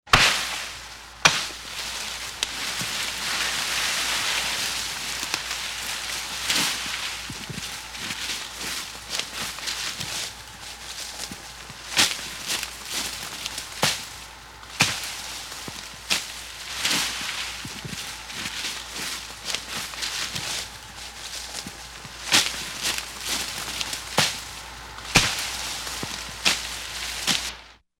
heiligenhaus - zehnthofweg - motorsäge - heiligenhaus, zehnthofweg, geäst ziehen
wegschleppen von geäst nach dem fällen eines baumes - sturmschadenbeseitigung im frühjahr 07
project: :resonanzen - neanderland - soundmap nrw: social ambiences/ listen to the people - in & outdoor nearfield recordings, listen to the people